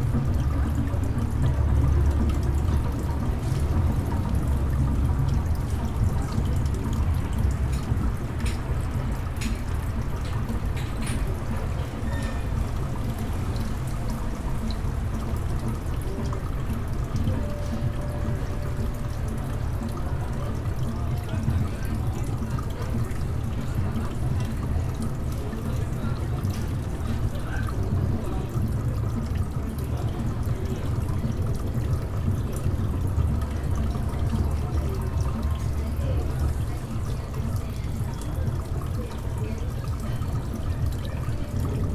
Recorded with a H4n in stereo mode, take from the garden of the Santropol restaurant.
Drums from the Mont Royal.
Fountain in the garden.
Trafic.
People talking and passing by.
Dishes.